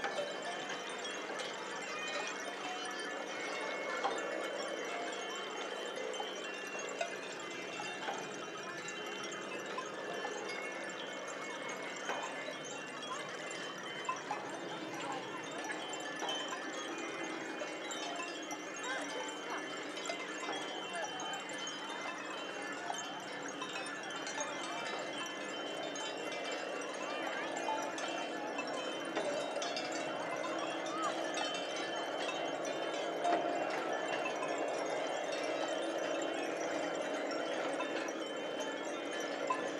Harmonic Fields, Distant
On The southern edge of the installation, sitting on a rock with the wind blowing the sound towards the mic.
Lakes Alive brought French artist and composer, Pierre Sauvageot (Lieux Publics, France) to create an interactive musical soundscape on Birkrigg Common, near Ulverston, Cumbria from 3-5 June 2011.
500 Aeolian instruments (after the Greek god, Aeolus, keeper of the wind) were installed for 3 days upon the Common. The instruments were played and powered only by the wind, creating an enchanting musical soundscape which could be experienced as you rested or moved amongst the instruments.
The installation used a mixture of traditional and purpose built wind instruments. For example metal and wood wind cellos, long strings, flutes, Balinese paddyfield scarecrows, sirens, gongs, drums, bells, harps and bamboo organs. They were organised into six movements, each named after a different wind from around the world.